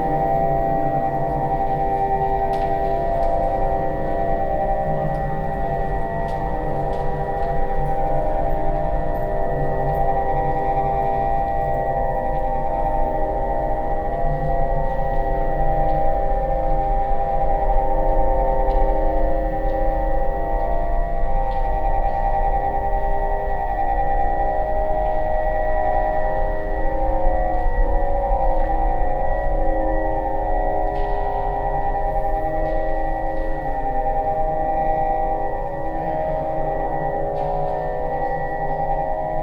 Deutz, Köln, Deutschland - Cologne, Deutzer Brücke, inside the bridge
Inside the center hall of the bridge. The sound of a mechanic installation by the artist group "Therapeutische Hörgruppe Köln" during the Brueckenmusik 2013.
soundmap nrw - social ambiences, art spaces and topographic field recordings/